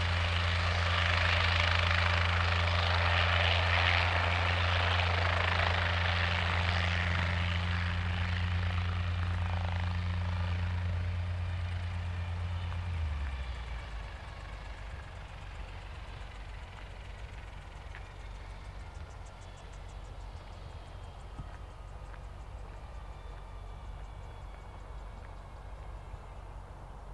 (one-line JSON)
{"title": "Old Sarum Airfield, Laverstock, UK - 023 Helicopter drone", "date": "2017-01-23 13:48:00", "latitude": "51.10", "longitude": "-1.78", "altitude": "72", "timezone": "GMT+1"}